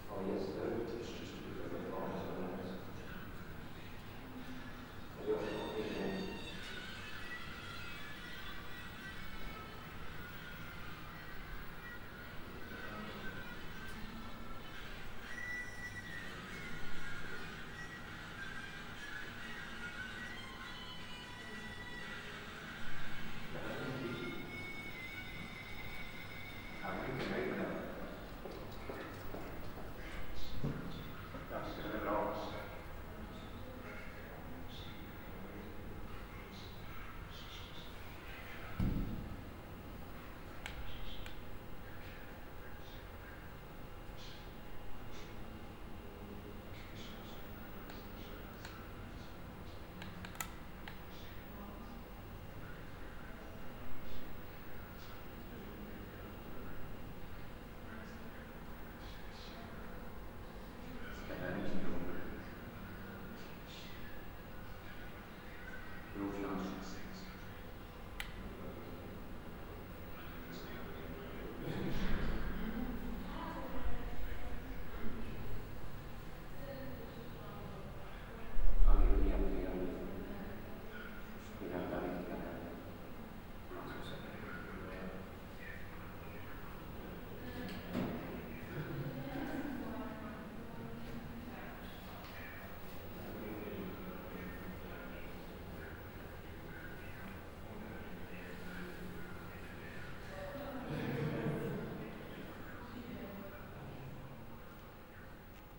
budapest, trafo, gallery
inside the small art gallery of the cultural venue rafo. sound impression from a media exhibition about danger in the cities
international city scapes - social ambiences, art spaces and topographic field recordings